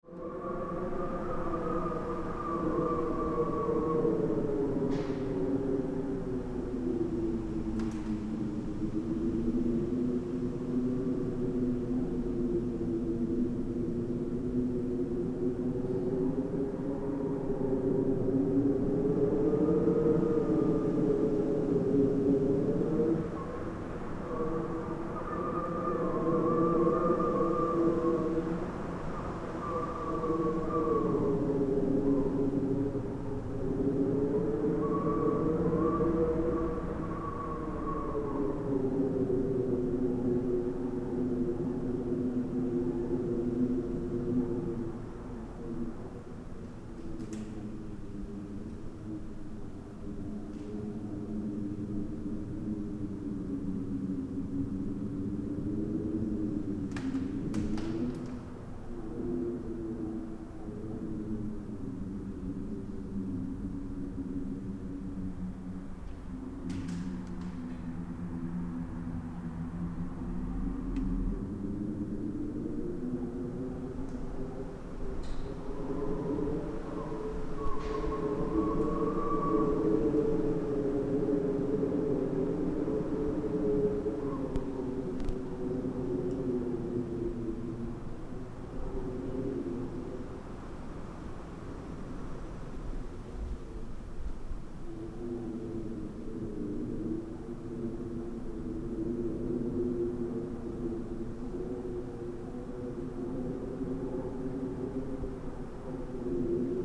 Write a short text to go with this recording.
Wind blowing in a resonant space (inside the entrance hall of a building with metal doors). Recording with a Blue line AKG MS stereo setup into a Zoom H4n.